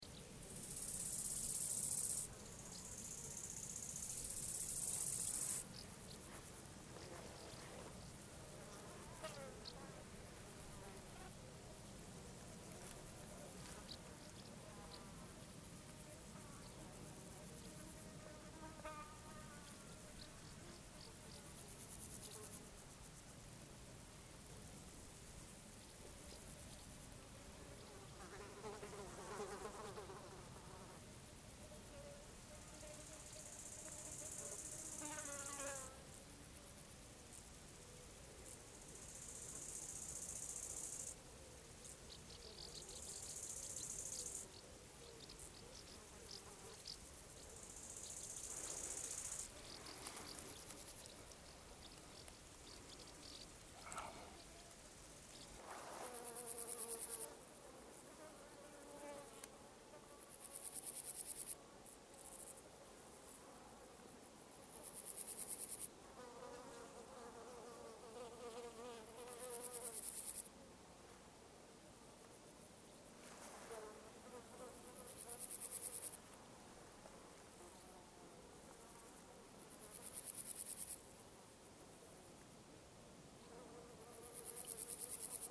Courpière, France - Summer field with flies
Close to the grass, flies and criquets